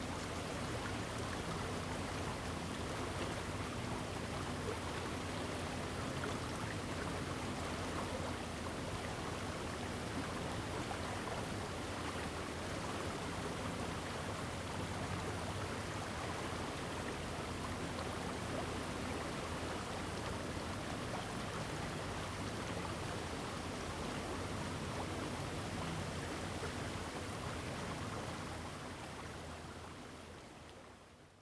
Very Hot weather, Small streams
Zoom H2n MS+XY
Hualien County, Taiwan, 28 August 2014, 09:10